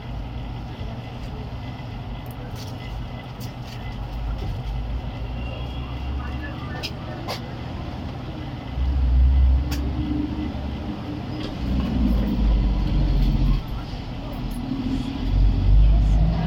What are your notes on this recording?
Información Geoespacial, (latitud:, longitud: ), Ciudadela San Michel, tienda, Descripción, Sonido Tónico: aire acondicionado, Señal Sonora: señora de la tienda hablando, Micrófono dinámico (celular), Altura: 2,21 cm, Duración: 3:00, Luis Miguel Henao, Daniel Zuluaga